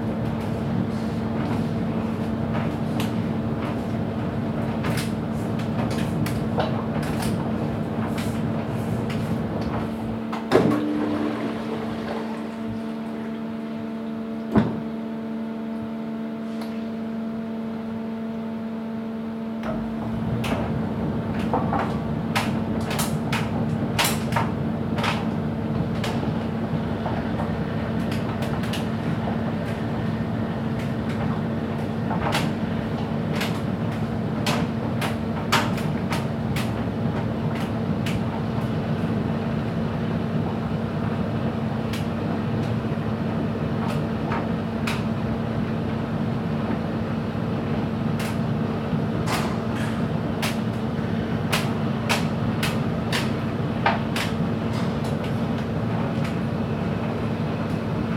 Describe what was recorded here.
Recordist: Saso Puckovski. Inside the laundry room of the Nida Art Colony while the dryer was running. Recorded with ZOOM H2N Handy Recorder.